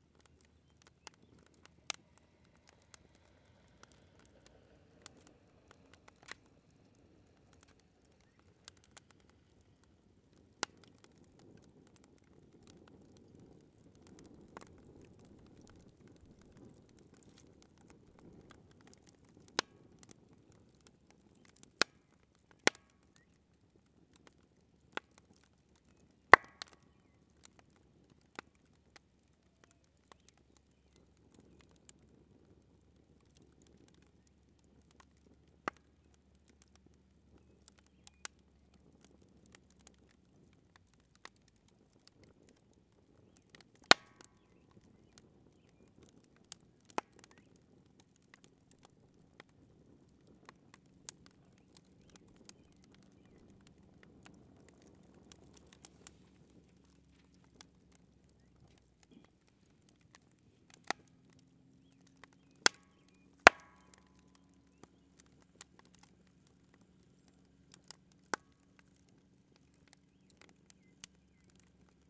Buryanek State Recreation Area - Camp Fire
Recording of a camp fire at the campground in the Burynanek State Recreation area.